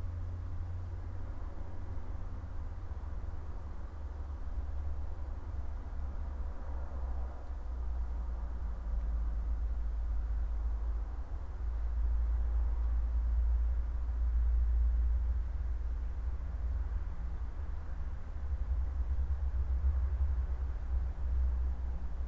inside of a cabin of a huge spider like construction crane - winds, rustling of leaves, cables, birds